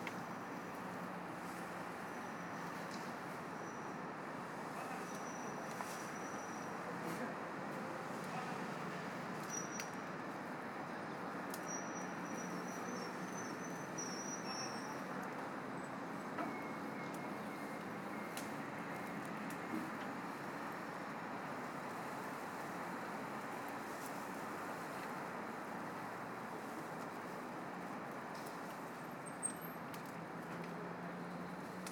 During a contingency day in Mexico, car or motorised vehicles are not supposed to be so many ! But as it has been possible to listen, motorized vehicles were there!
That could seem very strange to cut trees during a pollution alert in Mexico. One can have the feeling that nature will disappear with such kind of local habits! Actually people must be reminded that 40 years ago, before cars invaded the south of Mexico city, the place was occupied by trees, birds and cows! Xochimilco ecological zone is not too far from this place!
What I found, listening the city this day of may, was the feeling that motorized noises will not be in place for centuries. Broken tree branch noises, birds that we can listened from time to time are a clear message than resilience is not an abstract concept. Colibri are still leaving in this noisy and polluted city.